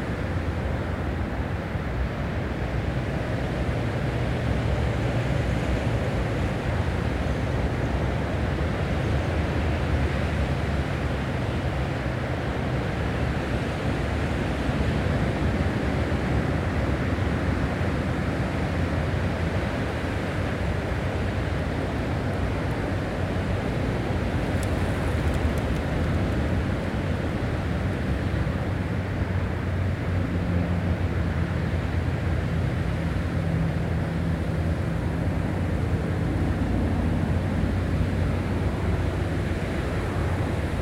motorway, engine, aircraft, traffic